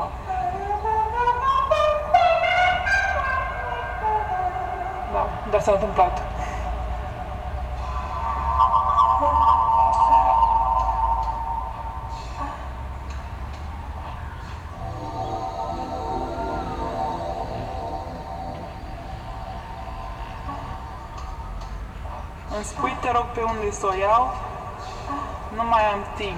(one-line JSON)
{"title": "Gruia, Klausenburg, Rumänien - Cluj, Fortress Hill project, emotion tube 1", "date": "2014-05-27 09:40:00", "description": "At the temporary sound park exhibition with installation works of students as part of the Fortress Hill project. Here the sound of emotions and thoughts created with the students during the workshop and then arranged for the installation coming out of one tube at the park. In the background traffic and city noise.\nSoundmap Fortress Hill//: Cetatuia - topographic field recordings, sound art installations and social ambiences", "latitude": "46.77", "longitude": "23.58", "altitude": "375", "timezone": "Europe/Bucharest"}